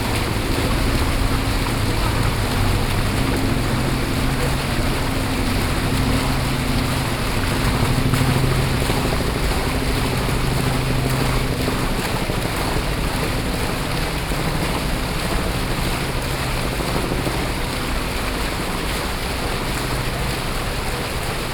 Norway, Oslo, Oslo Radhus, Fountain, water, binaural
3 June 2011, ~11:00